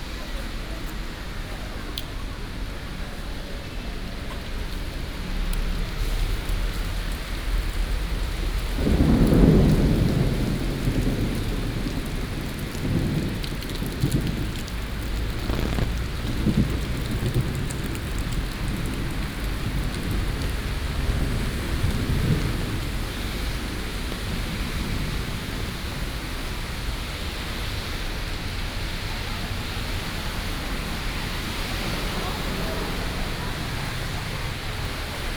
Sec., Xinyi Rd., Da’an Dist., Taipei City - Walking in the rain
Walking in the rain, Thunderstorm, Traffic Sound
Taipei City, Taiwan, 23 July 2015